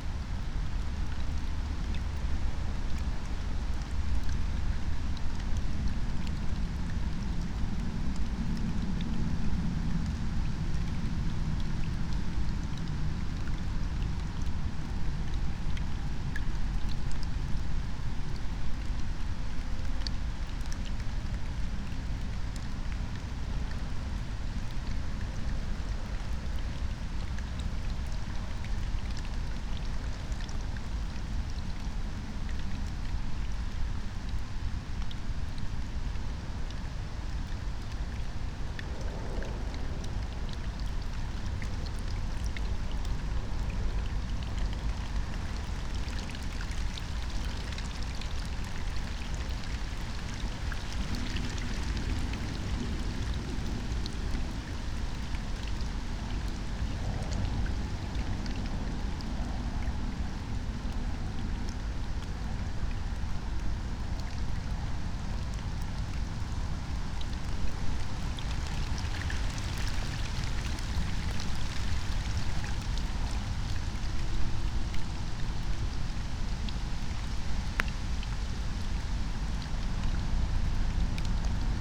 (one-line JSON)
{"date": "2021-08-30 18:14:00", "description": "18:14 Berlin, Alt-Friedrichsfelde, Dreiecksee - train junction, pond ambience", "latitude": "52.51", "longitude": "13.54", "altitude": "45", "timezone": "Europe/Berlin"}